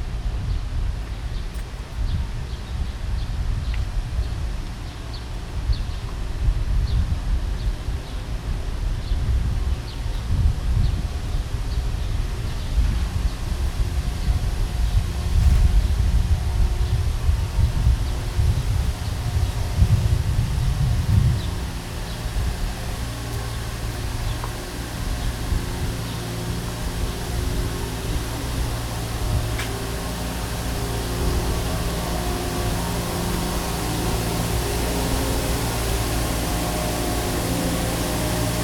zeitraumexit, Mannheim - Kazimir Malevich, eight red rectangles
street cleaning machine, poor dog, 11 in the morning and they drink to life